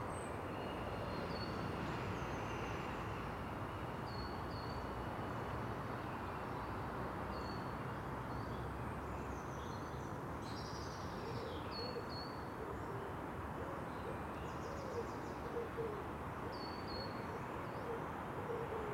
Contención Island Day 80 inner east - Walking to the sounds of Contención Island Day 80 Thursday March 25th
The Drive High Street Moor Road South Rectory Road Rectory Avenue Rectory Drive Stoneyhurst Road Alnmouth Drive
In the early dawn
I overlook a wooded vale
running down to the island shore
A seat beyond a fence
each weather-worn
beneath the trees
Hard pruned elder
a metro passes
a robin’s song
North East England, England, United Kingdom, 25 March, 06:27